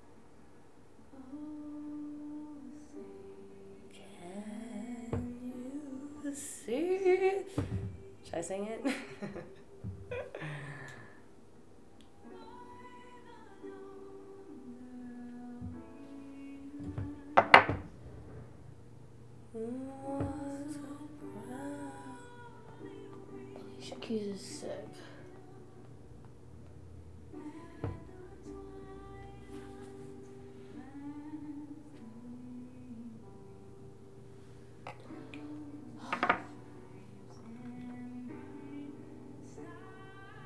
"The Mothership" 951 15th Street, Boulder, CO - Anthem Dab
Listening to the national anthem while smoking hash oil.
Boulder, CO, USA, 3 February 2013